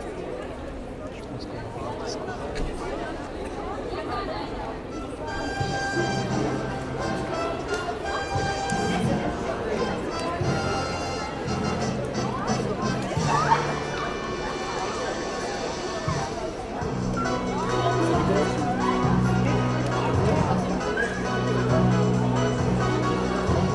The first day at school is very important in Armenia. It's a local festivity. During this morning and before the first hour in class, young students proclaim speeches.